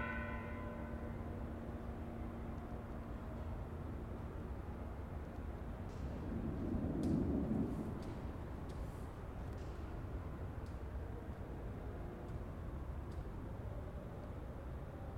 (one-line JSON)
{"title": "Cine Ópera, Col. San Rafael - Interior Cine Ópera", "date": "2016-09-08 18:38:00", "description": "Grabación realizada al interior de la nave principal del legendario Cine Ópera, actualmente abandonado. El objetivo era registrar ecos de la memoria sonora del lugar, capturar el \"silencio\" y la resonancia de sonidos del exterior. Se logran escuchar pasos explorando el impresionante lugar, ya vacío de butacas o vestigios de lo que llegó a ser. Al final del track las campanas de la iglesia de San Cosme y Damián se hacen presentes señalando la hora, así como el tronido del cielo anunciando posible lluvia.", "latitude": "19.44", "longitude": "-99.16", "altitude": "2248", "timezone": "America/Mexico_City"}